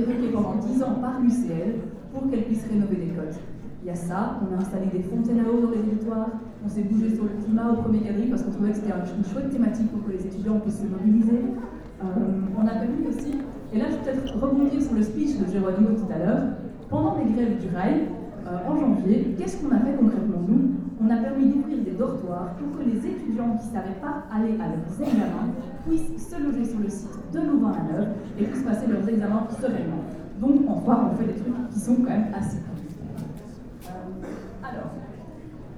Centre, Ottignies-Louvain-la-Neuve, Belgique - Cactus Awakens
Students trade union presents their programm with a view to the future elections.
Ottignies-Louvain-la-Neuve, Belgium, 11 March 2016